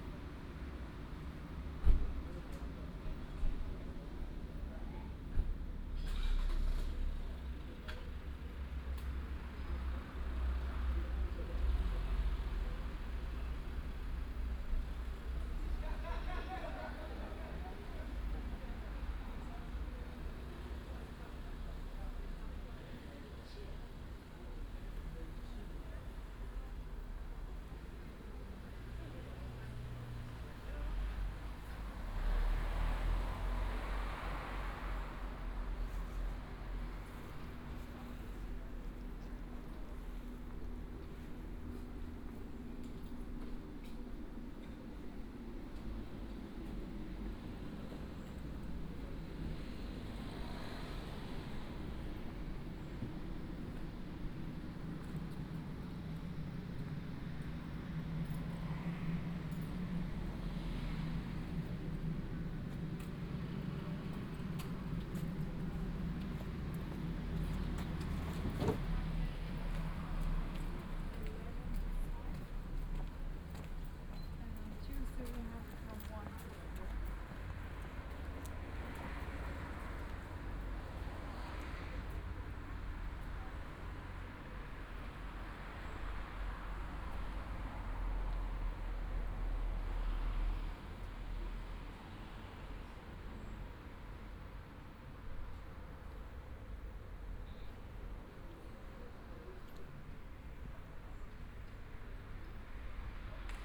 at the terminus of line 1, waiting, browsing around
(Sony PCM D50, OKM2)
Oberösterreich, Österreich, 7 September 2020, ~20:00